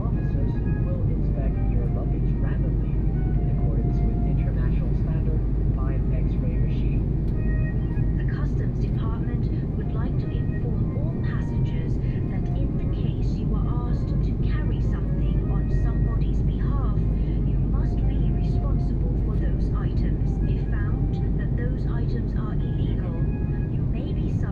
泰國, Thailand - Broadcast in the cabin
Broadcast in the cabin